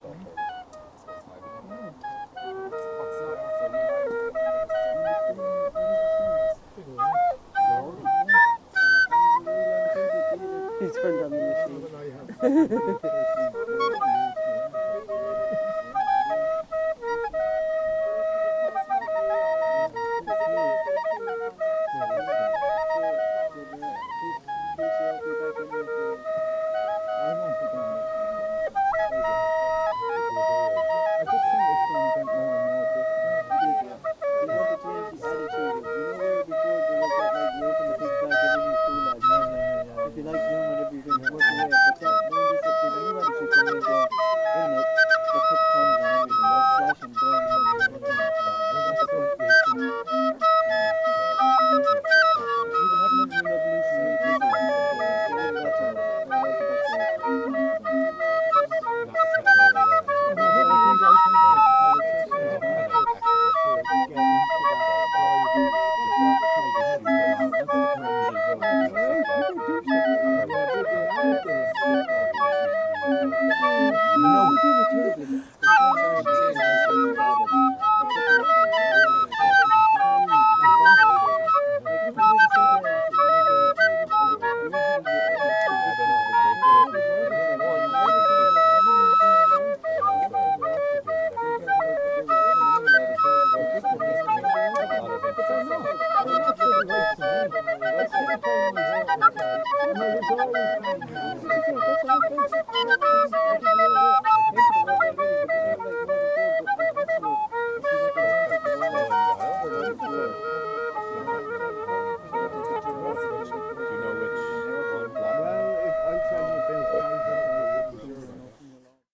Music by the Fire, The Octagon, The Glen of the Downs Nature Reserve, County Wicklow, Ireland - Recorders duet
Myself and Damnhait dueting badly on Jeff's old wooden recorders.